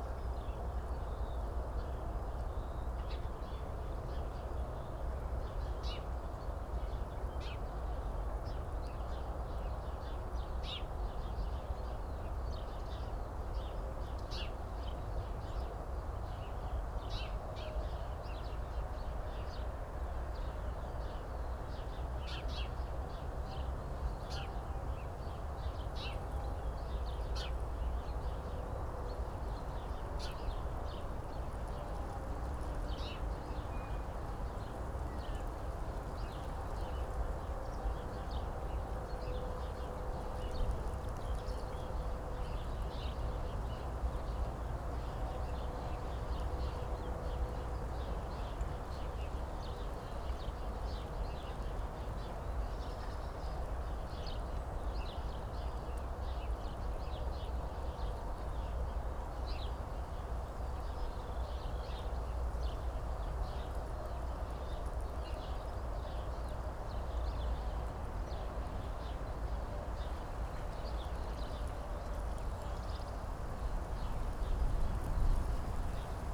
Tempelhofer Feld, Berlin, Deutschland - spring morning
place revisited on a spring morning, birds, noise from the autobahn A100, a bit of wind
(SD702, DPA4060)